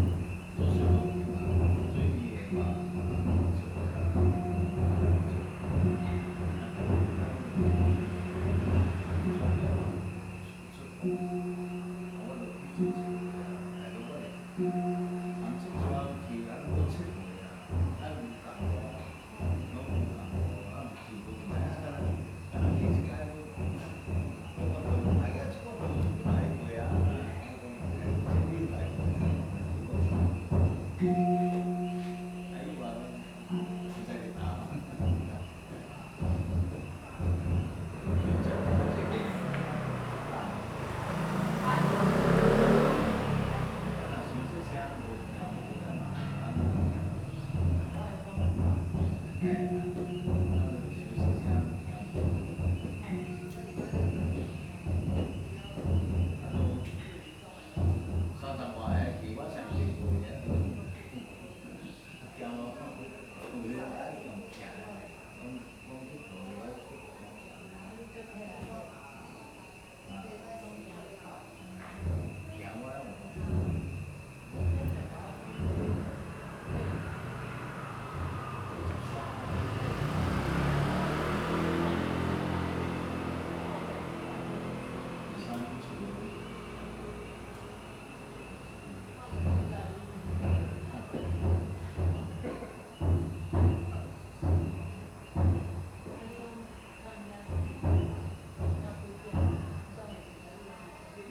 福同宮, 桃米里Puli Township - In the temple square
In the temple square
Zoom H2n MS+XY